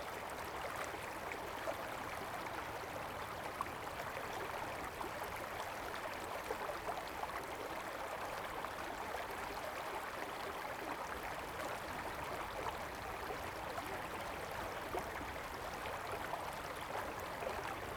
建農里, Taitung City - Streams
Streams, The weather is very hot
Zoom H2n MS +XY